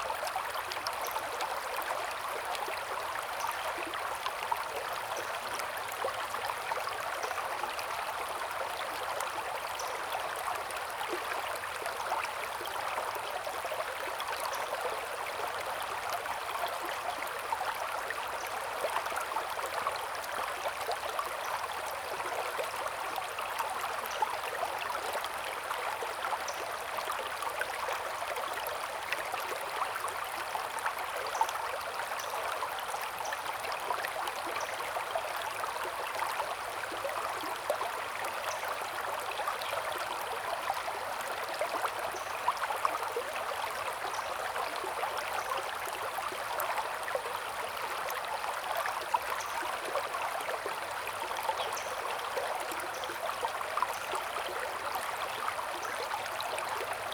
中路坑溪, 桃米里 - Stream and bird sound
Stream and bird sound
Zoom H2n MS+XY